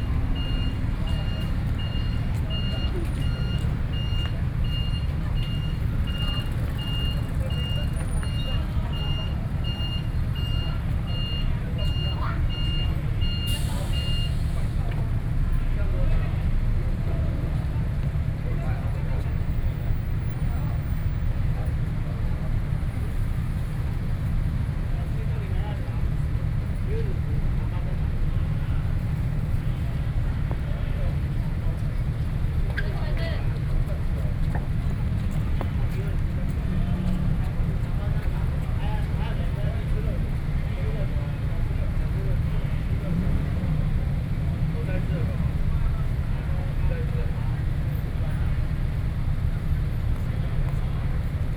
Zhongshan S. Rd., Taipei City - Intersection
Sitting opposite roadside diner, Far from protest activities, The crowd, Traffic Noise, Sony PCM D50 + Soundman OKM II
Taipei City, Zhongzheng District, 景福門